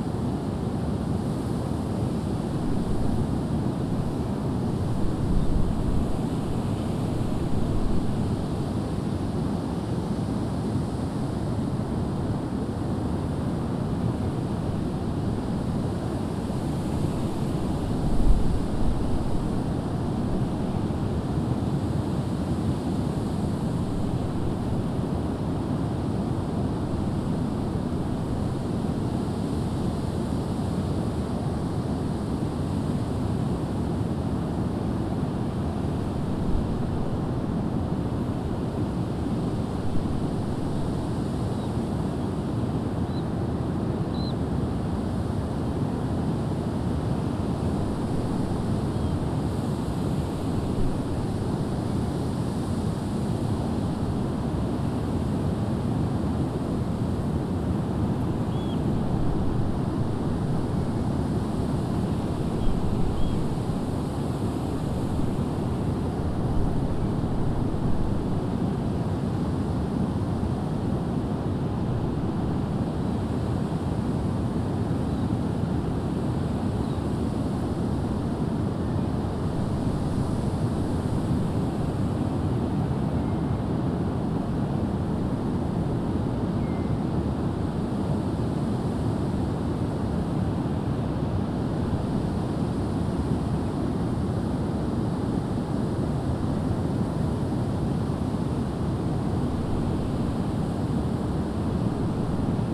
Unnamed Road, Prestatyn, UK - Reed Bed and Dunes, Lower Gronant
Late evening recording between reed beds and dunes at Gronant, Clwyd. Recorded on a Tascam DR-40 using the on-board microphones as a coincident pair with windshield.